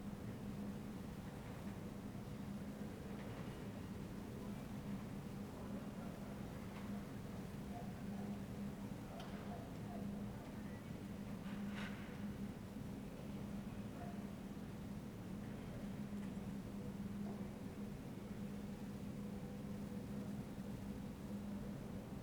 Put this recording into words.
"Terrace at sunset last April day in the time of COVID19" Soundscape, Chapter LXI of Ascolto il tuo cuore, città. I listen to your heart, city, Thursday April 30th 2020. Fixed position on an internal terrace at San Salvario district Turin, fifty one after emergency disposition due to the epidemic of COVID19. Start at 8:25 p.m. end at 8:58 p.m. duration of recording 33'33'', sunset time at 8:37 p.m.